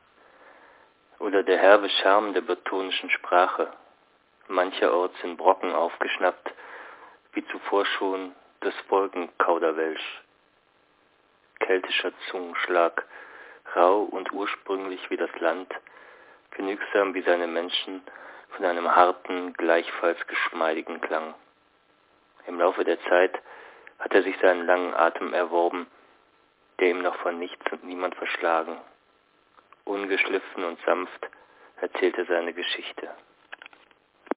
himmel/worte/land (6) - himmel worte land (6) - hsch ::: 08.05.2007 19:26:31